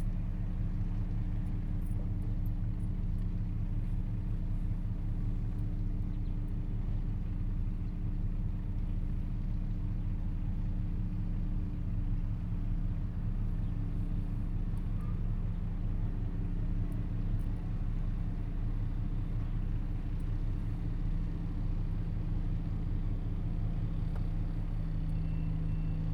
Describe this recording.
In the fishing port, Zoom H2n MS+XY